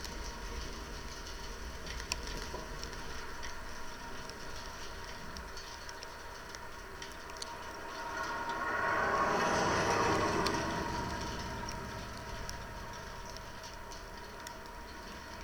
Utena, Lithuania - Road sign in a wind
Recorded with contact microphones placed on the tin-plate road sign. In some way it works like a membrane "catching" not only snowflakes hitting the tin, but also the sound of cars passing by...